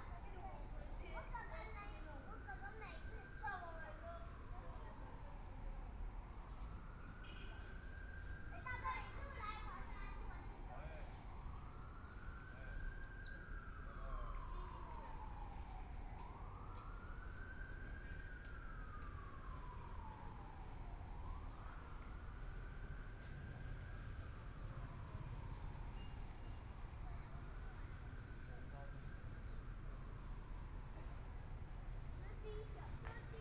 in the Park, Binaural recordings, Zoom H4n+ Soundman OKM II